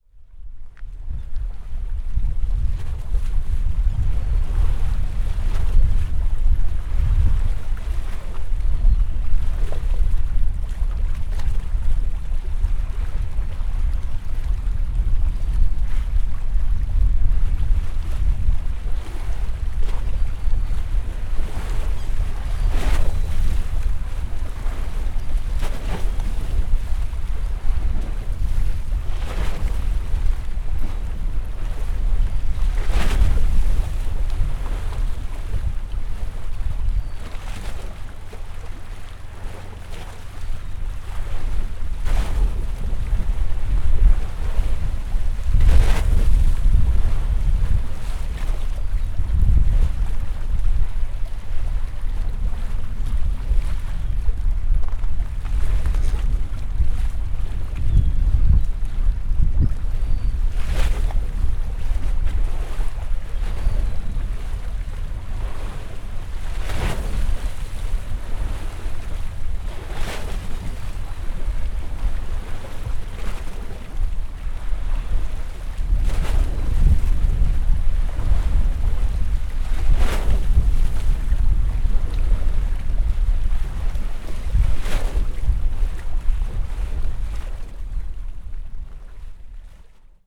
{
  "title": "Hel, pier, waves and seagulls",
  "date": "2012-01-28 13:03:00",
  "description": "recorded in gusts of ice-cold wind, the side of the pier was all frozen, lots of gulls and tern hovering around",
  "latitude": "54.60",
  "longitude": "18.80",
  "timezone": "Europe/Warsaw"
}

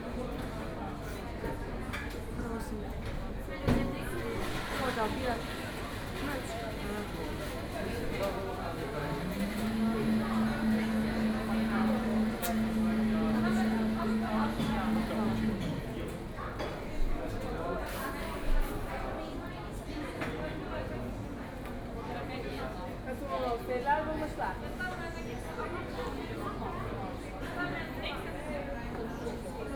{
  "title": "Altstadt, Munich 德國 - Inside the coffee shop",
  "date": "2014-05-11 12:48:00",
  "description": "Inside the coffee shop, Starbucks",
  "latitude": "48.14",
  "longitude": "11.58",
  "altitude": "516",
  "timezone": "Europe/Berlin"
}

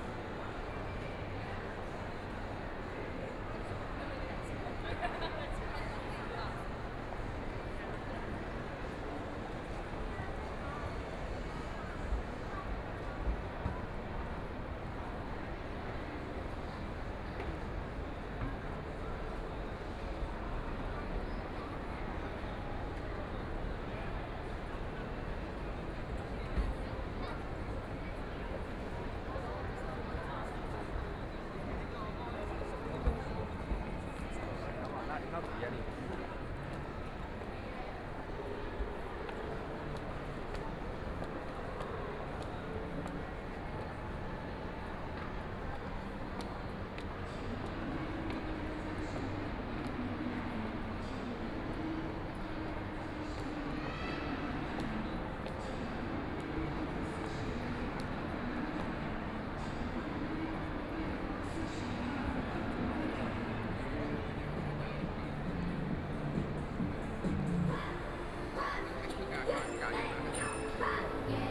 {
  "title": "Super Brand Mall, Lujiazui Area - inside the mall",
  "date": "2013-11-21 13:10:00",
  "description": "Walking inside the mall, Binaural recording, Zoom H6+ Soundman OKM II",
  "latitude": "31.24",
  "longitude": "121.50",
  "altitude": "21",
  "timezone": "Asia/Shanghai"
}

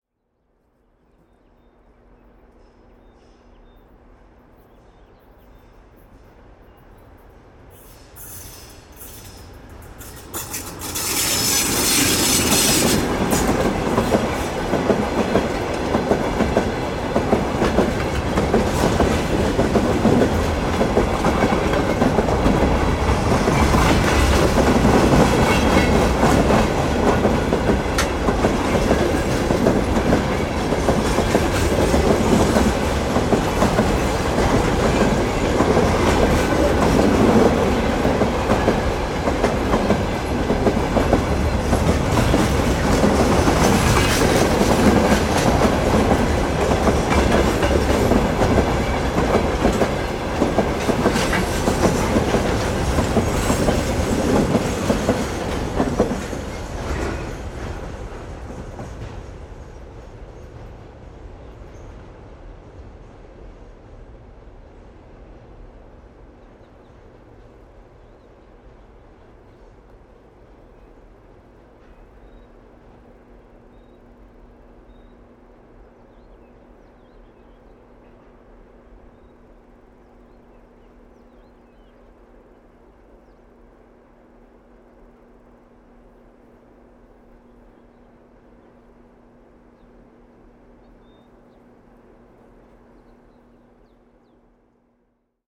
{
  "title": "ERM fieldwork -forest train crossing",
  "date": "2010-07-05 14:20:00",
  "description": "empty train cars arrive to be filled at the mine",
  "latitude": "59.21",
  "longitude": "27.42",
  "timezone": "Europe/Tallinn"
}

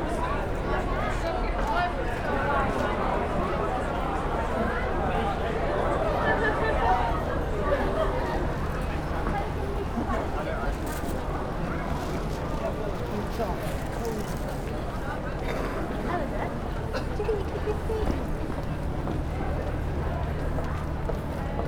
{
  "title": "St. Ann's Square, Manchester - Visiting German Christmas Market",
  "date": "2011-12-19 16:30:00",
  "description": "Walking around the German Christmas market in Manchester. Voices, buskers playing Christmas Carols...",
  "latitude": "53.48",
  "longitude": "-2.25",
  "altitude": "51",
  "timezone": "Europe/London"
}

